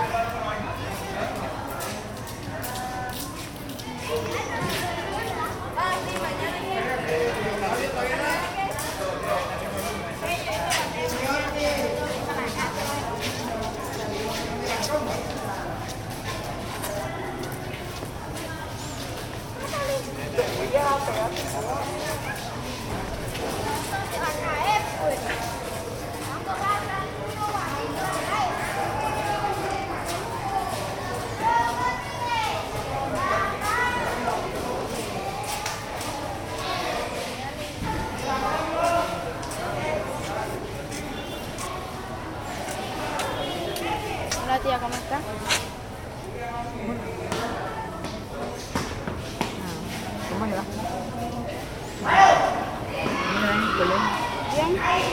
Colegio Pinillos, Bolívar, Colombia - Pinillos
Students prepare for finishing their day at the courtyard of old-time Colegio Pinillos